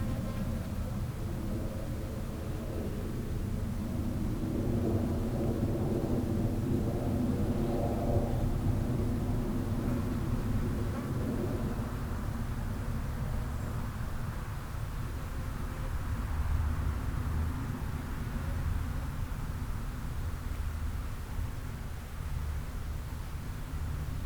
Linköping S, Schweden - Sweden, Stafsäter - bees in the forest
Inside a small forest part nearby the street. The sound of bees accompanied by some rare street traffic and the sound of a plane crossing the sky.
international soundmap - social ambiences and topographic field recordings